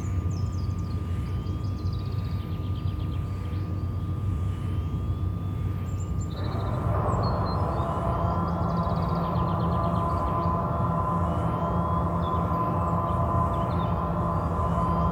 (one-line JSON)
{"title": "near Allrath, Germany - Windgenerator, microphone touching the tower", "date": "2012-04-04 13:13:00", "description": "External and internal sounds of the windgenerator", "latitude": "51.06", "longitude": "6.61", "altitude": "155", "timezone": "Europe/Berlin"}